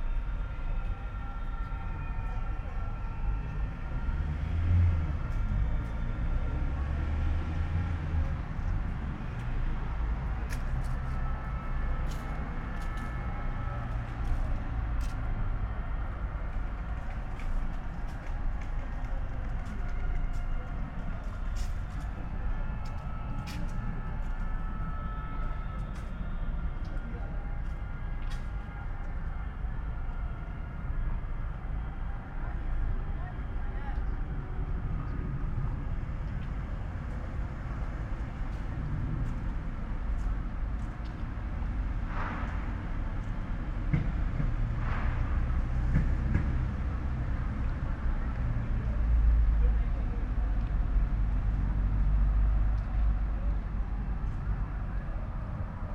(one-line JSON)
{"title": "while windows are open, Maribor, Slovenia - riots", "date": "2012-11-26 18:03:00", "description": "people demonstrating and calling ”gotof je” to the mayor and city government, a lot of pyrotechnics were used at the time", "latitude": "46.56", "longitude": "15.65", "altitude": "285", "timezone": "Europe/Ljubljana"}